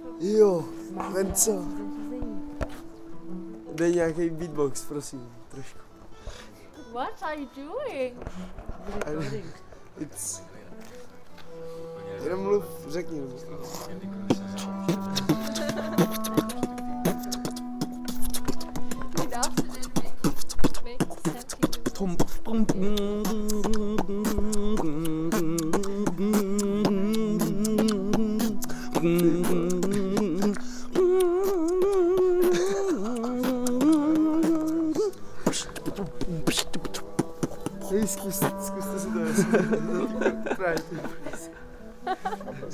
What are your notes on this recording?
Young beatboxers during the opening...